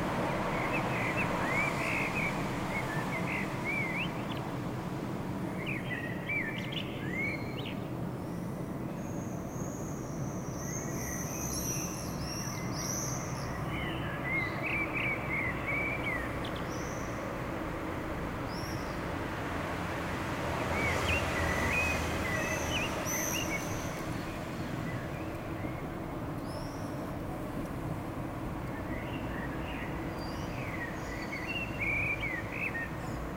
koeln, beginning thunderstorm
recorded june 22nd, 2008, around 10 p. m.
project: "hasenbrot - a private sound diary"